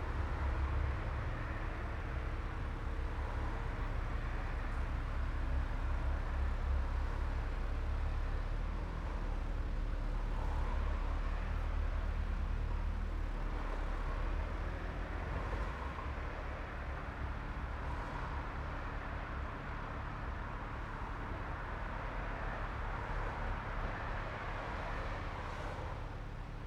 Nice weather for binaural recordings.
The infinite variety of noises is infinite.
Rijeka, Croatia, 28 February 2013